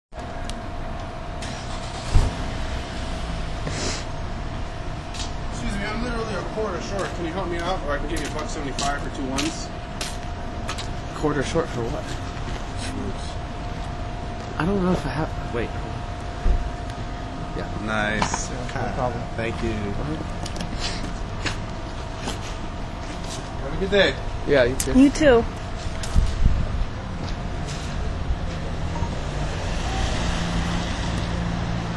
syracuse, street talk, armory square
NY, USA